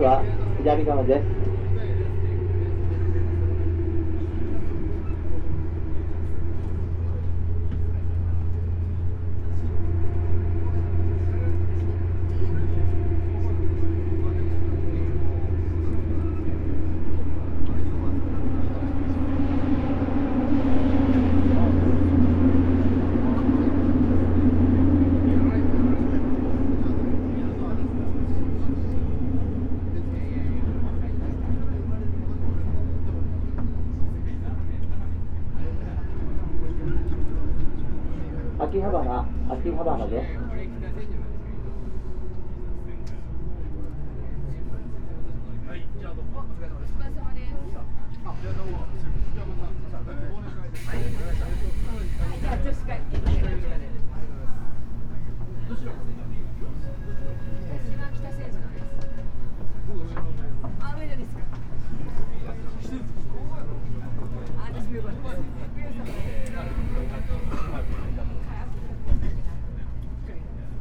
subway, tokyo - night train
from Kamiyacho to Ueno station
Minato, Tokyo, Japan